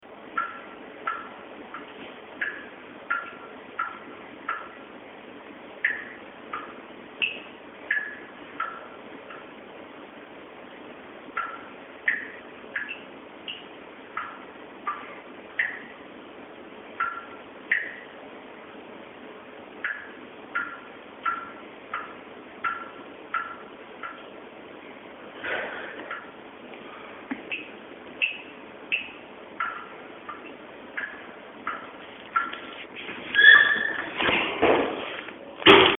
12.03.2008 00:48 - A dripping tap, a slamming door, Elvis has just left the building.
Nansenstr./Maybachufer, Berlin